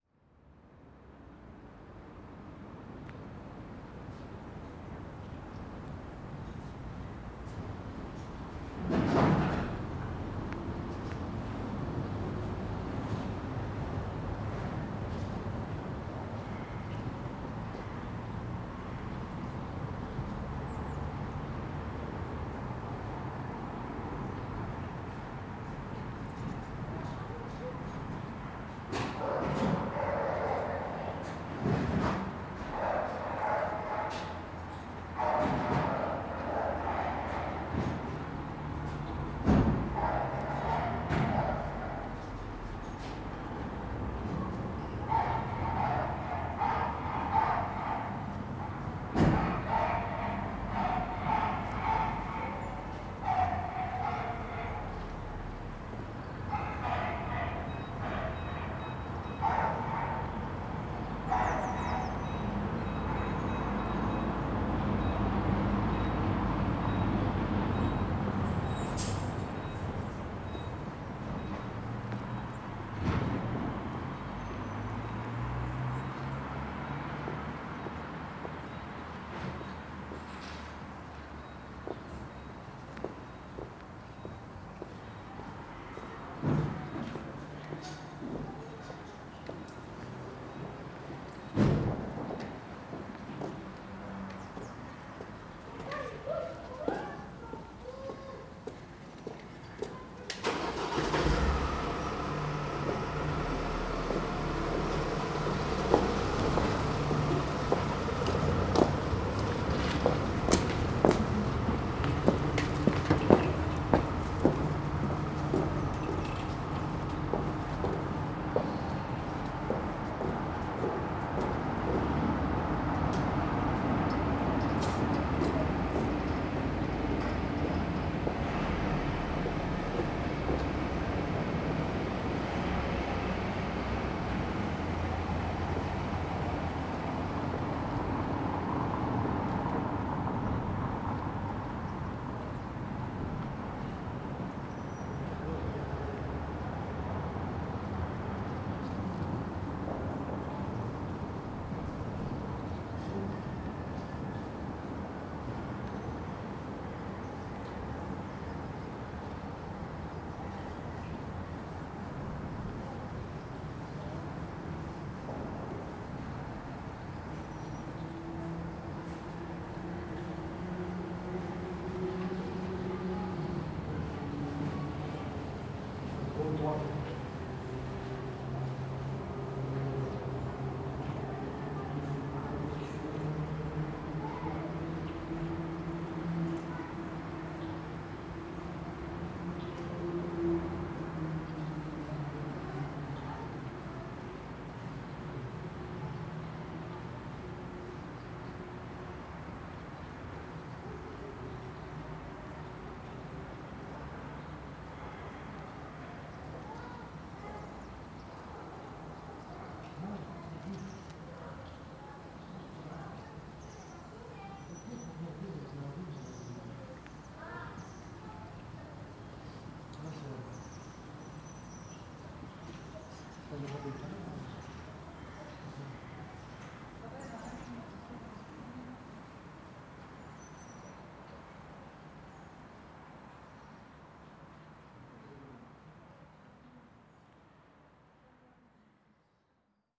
Pl. Sainte-Begge, Andenne, Belgique - Behind the church ambience
Workers leaving the church, echo from dogs barking, a woman passing by, a few cars.
Tech Note : Sony PCM-D100 internal microphones, wide position.